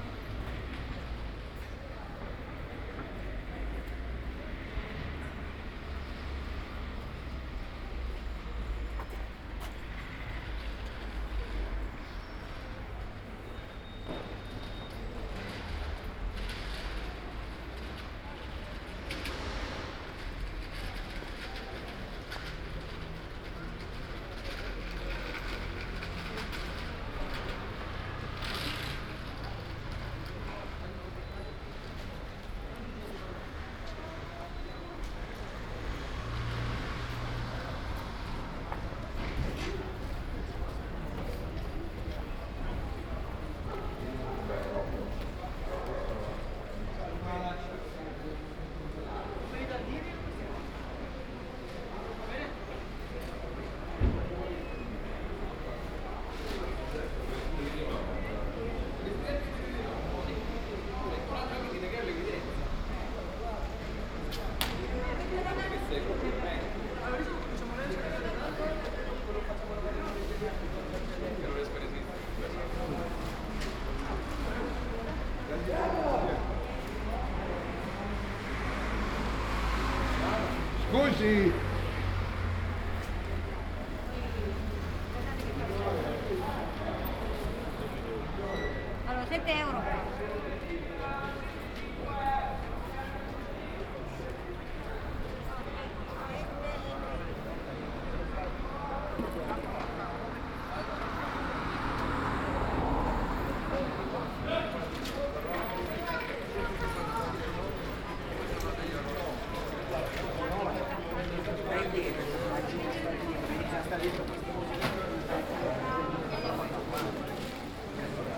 Ascolto il tuo cuore, città. I listen to your heart, city. Chapter XIII - Postal office and shopping in the time of COVID19 Soundwalk
Wednesday March 18 2020. Walking to Postal Office and shopping, San Salvario district, Turin, eight day of emergency disposition due to the epidemic of COVID19.
Start at 10:45 a.m. end at 11:20 a.m. duration of recording 35'03''
The entire path is associated with a synchronized GPS track recorded in the (kml, gpx, kmz) files downloadable here:
Piemonte, Italia, 18 March 2020